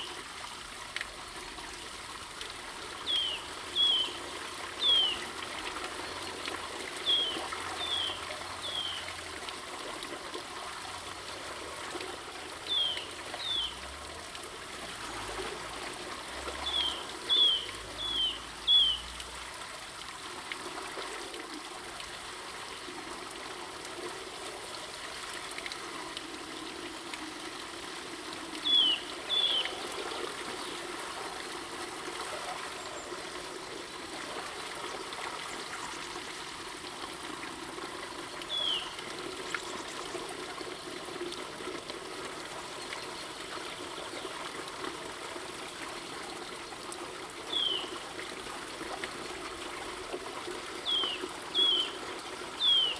Svatý Jan pod Skalou 40, 266 01 Svatý Jan pod Skalou, Czechia - healing spring of Saint Ivan
the sound of the water running from the spring in the cave of Saint Ivan in the Svatý Jan pod Skalou Monastery.